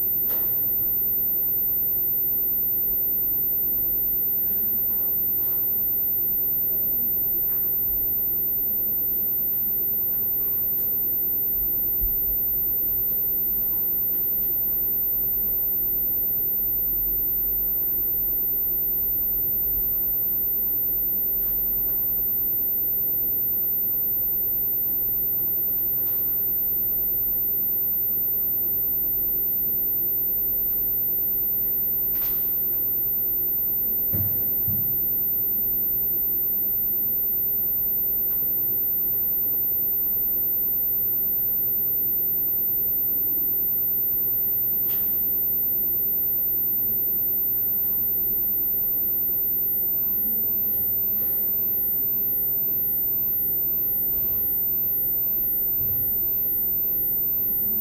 Arne Nováka, Brno-střed-Veveří, Česko - Radio-frequency EAS Systems, Central Library, Faculty of Arts MU
Recorded on Zoom H4n + Sennheiser MKH416 + Rode NTG 1 (binaural), 15.10.2015.
Jihomoravský kraj, Jihovýchod, Česko, 15 October